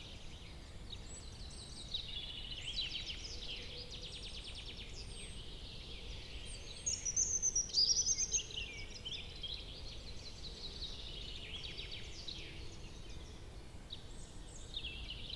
Unnamed Road, Forbach, Deutschland - Rote Lache - Black Forest, morning birds
Black Forest morning atmosphere, birds